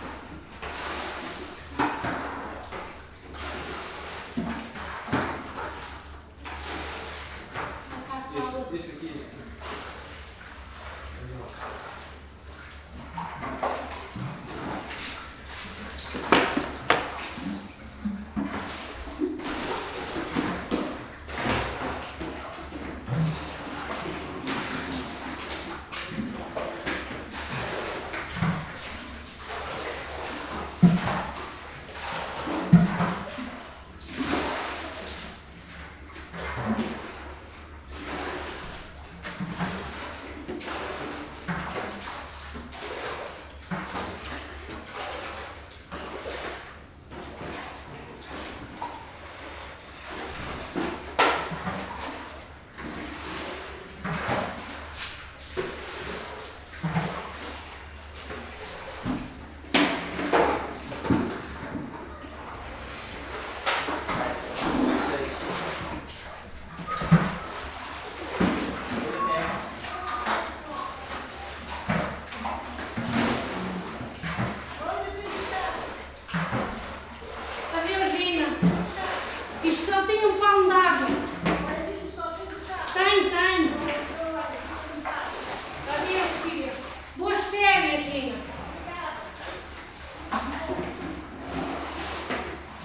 Rádio Zero, IST, Lisboa... shoveling water after the flood
Shovelling water out of the area where Rádio Zero new studios will be, after a big day of rain has siped inside the building.
Olympus LS-5